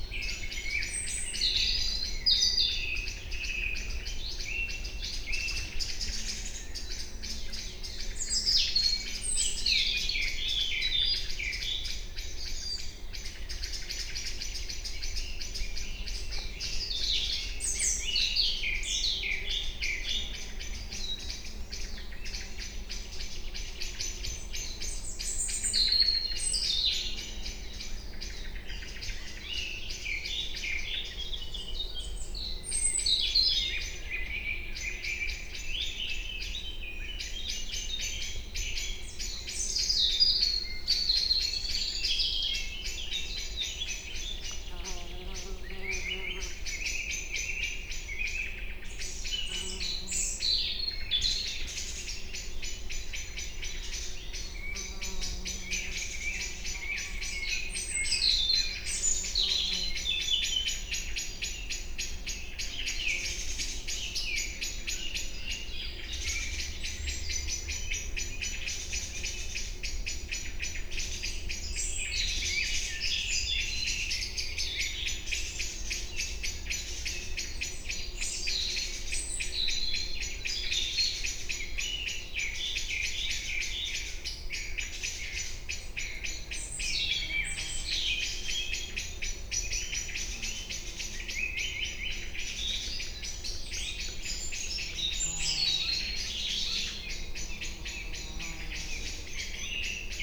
Part of bird evening chorus in forest (deciduous| in Small Carpathian mountains near Bratislava.
Bratislavský kraj, Bratislava, Slovensko, 8 May 2022, ~19:00